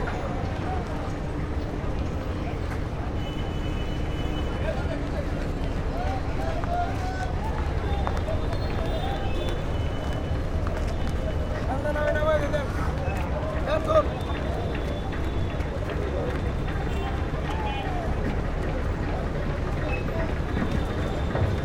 Sadarghat launch terminal, Dhaka, Bangladesh - Sadarghat launch terminal
Sadarghat launch terminal is a very busy port. You get launches to go to many directions from Dhaka from this port. It is always busy, always full of people and always full of boats and vessels.
ঢাকা জেলা, ঢাকা বিভাগ, বাংলাদেশ, 12 June 2019